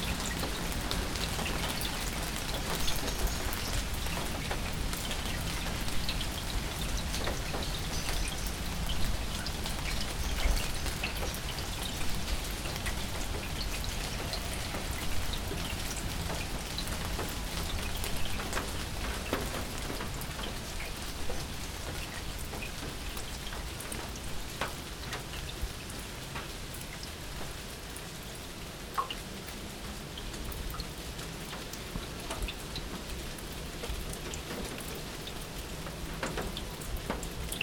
Maribor, Slovenia - Rain drops on the roof of public toilet
Rain drumming on the roof top of the public toilet in the City park of Maribor. Recorded with Zoom H4